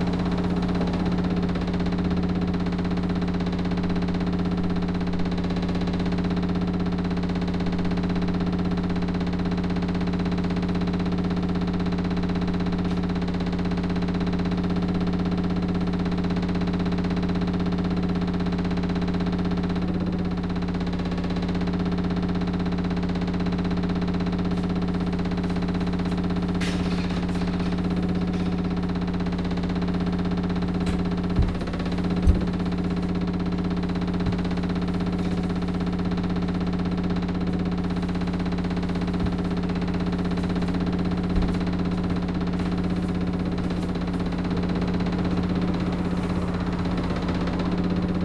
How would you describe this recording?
Kitchen window suddenly started to vibrate by itself..